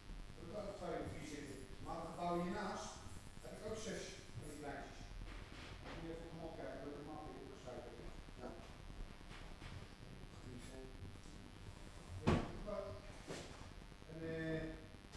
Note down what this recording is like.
Glazier working. Repairing my windows after they were bombarded with hailstones the week before. I think the rhythmic noise pattern in this recording was caused by my wireless router. The recorder stood right next to it.